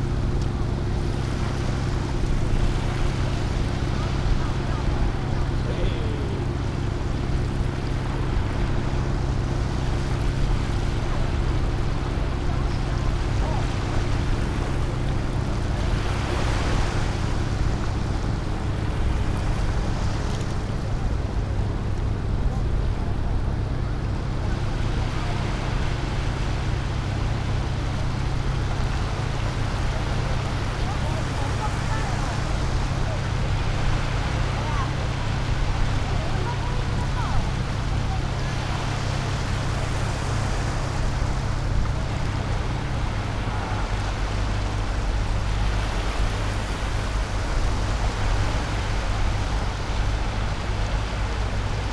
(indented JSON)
{
  "title": "Bali, New Taipei City - On the beach",
  "date": "2012-07-01 17:14:00",
  "latitude": "25.16",
  "longitude": "121.43",
  "timezone": "Asia/Taipei"
}